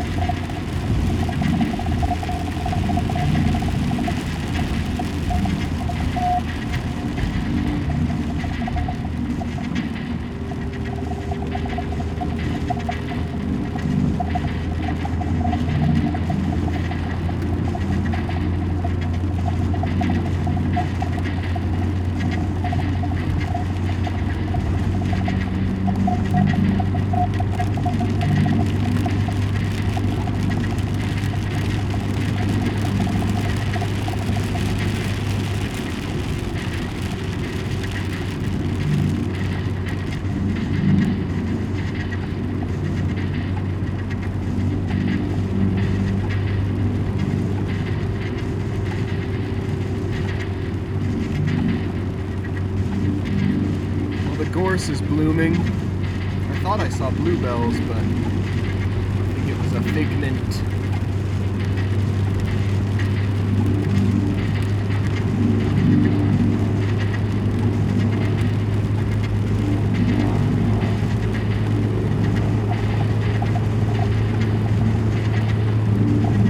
Recorded with a Zoom H1 with a Lake Country Hail storm and the car stereo blathering.
England, United Kingdom, 18 May, ~14:00